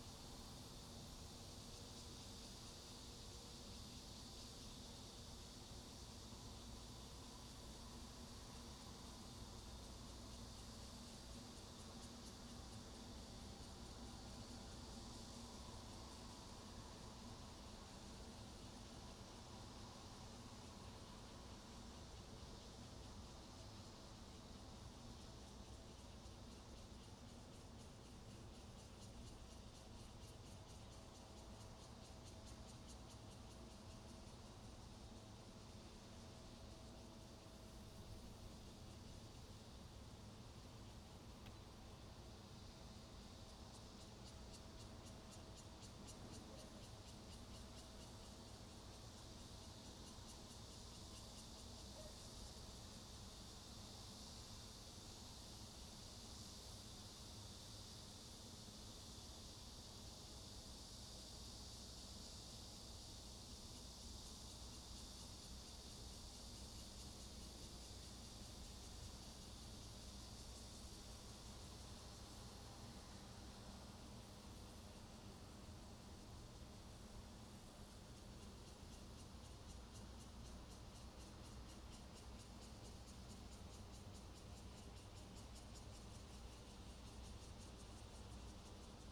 Ln., Sec., Xinguang Rd., Pingzhen Dist. - The train runs through
Next to the railroad tracks, The train runs through
Zoom H2n MS+ XY